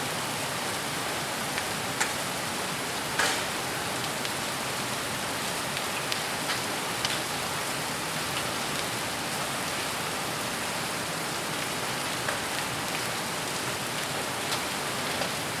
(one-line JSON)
{"title": "Nonntaler Hauptstraße, Salzburg, Österreich - Heavy Rain and Hail", "date": "2021-06-24 16:04:00", "description": "Regen und Hagel.Rain and Hail.", "latitude": "47.80", "longitude": "13.05", "altitude": "435", "timezone": "Europe/Vienna"}